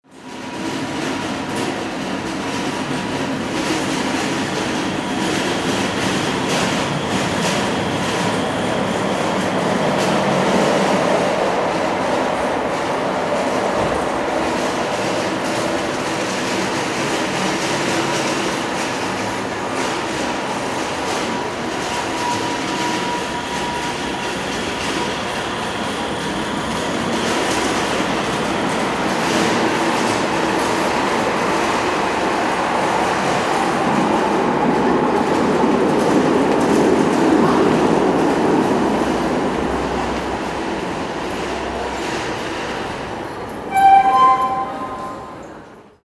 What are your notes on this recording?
Subway station Venloer Str./Gürtel. recorded july 4th, 2008. project: "hasenbrot - a private sound diary"